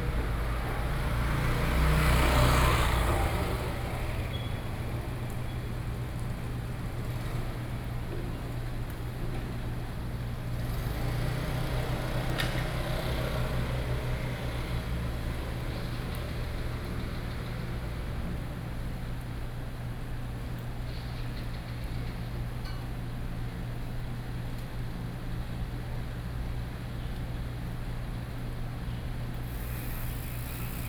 Dogs barking, Bird calls, Traffic Sound, small Alley
Binaural recordings, Sony PCM D50
Taipei City, Taiwan, September 2012